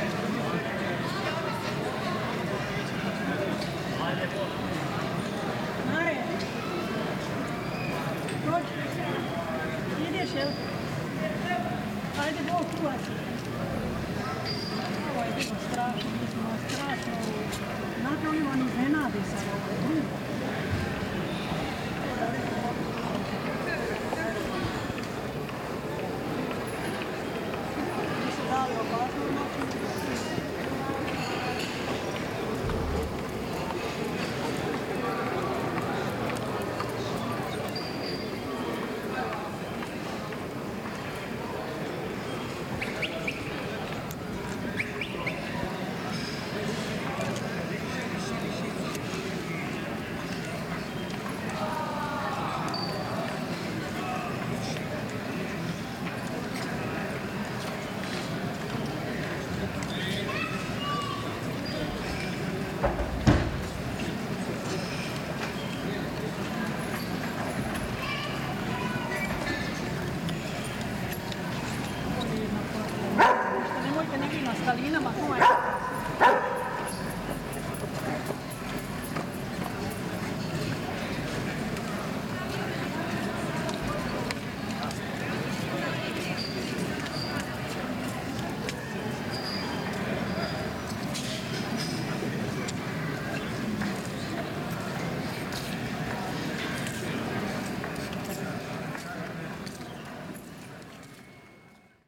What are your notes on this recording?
atmosphere in the core of the town towards the end of war; tower bells ringing 11a.m., a few commentaries of citizens about taking care